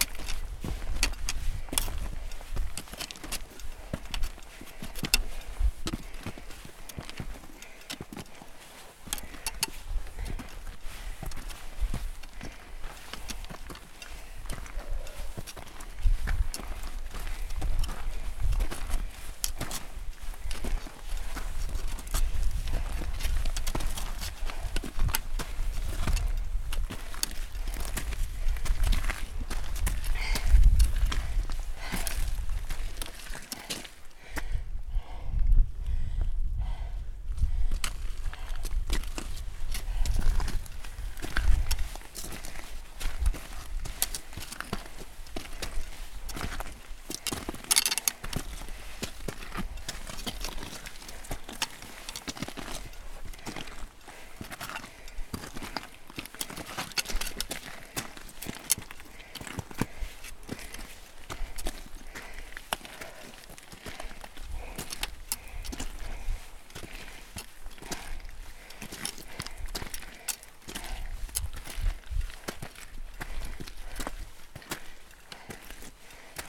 Aufstieg zum Restipass 2627 Meter
Aufstieg, eigenartige Steinformatonen, Einschlüsse von Quarz, sehr hoch alles, Wetter geeignet, gute Sicht, Archaik pur